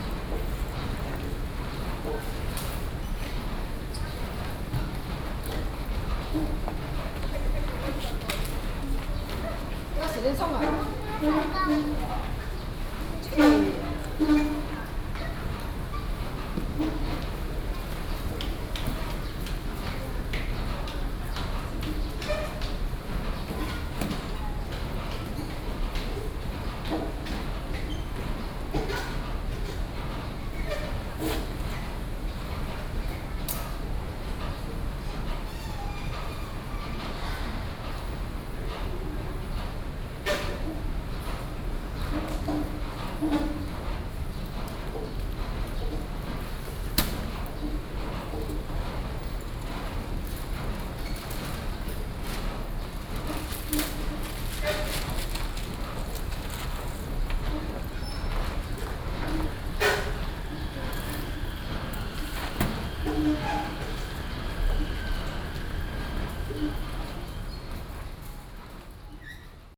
Shulin Station, New Taipei City - Escalators
Old escalator noise, Sony PCM D50 + Soundman OKM II